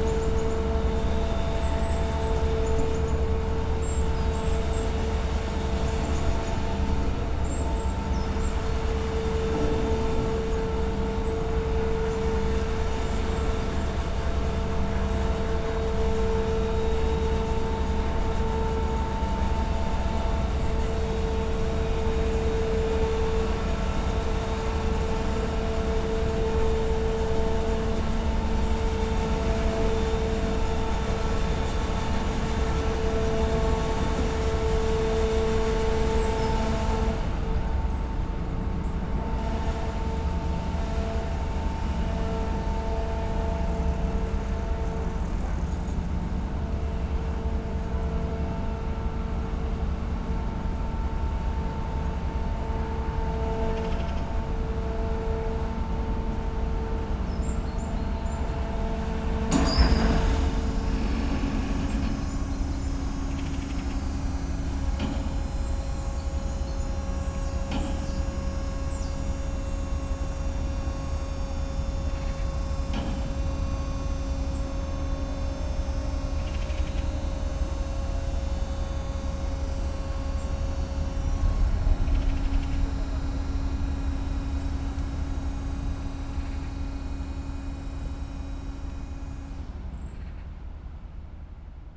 Amfreville-sous-les-Monts, France - Poses sluice
The sluice door opening, letting go a boat inside the sluice.
20 September 2016, 09:05